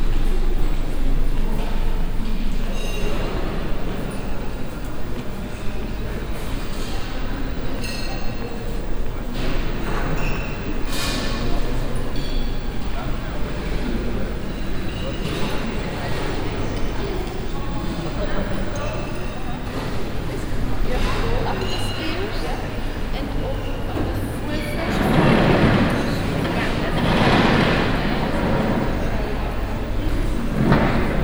karlsruhe, zkm, foyer
atmosphere recording of the foyer of the zkm (center for art and media technology)in karlsruhe - an info center and open space cafe area with accompanied book shop
soundmap d - topographic field recordings und social ambiences